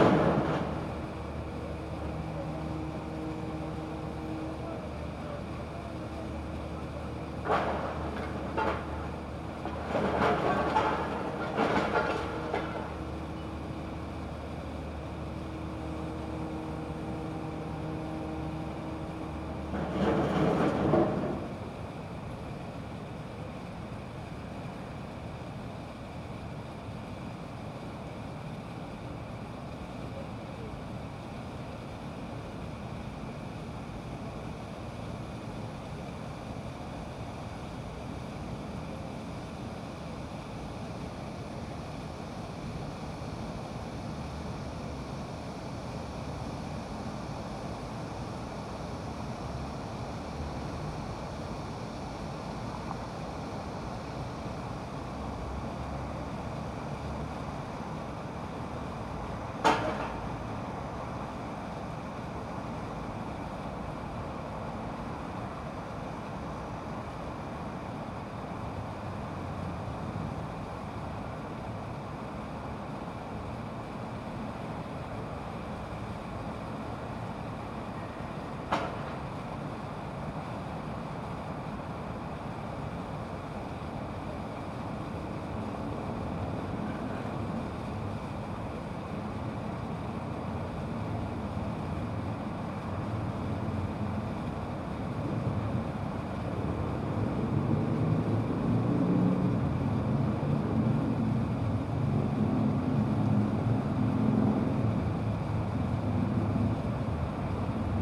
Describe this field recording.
construction yard, machine growl in distance, 공사장, 원거리 철거 소음